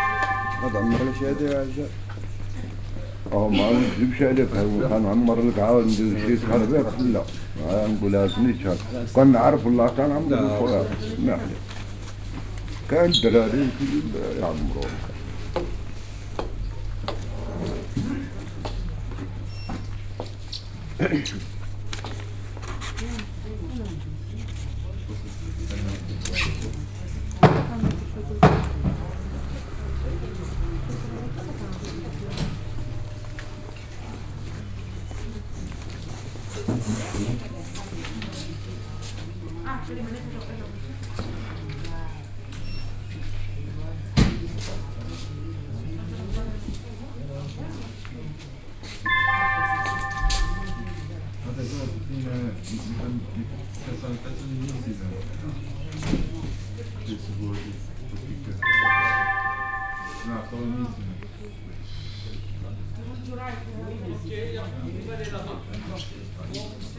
An old man who can write asks for help.
Saint-Gilles, Belgium, 14 December 2011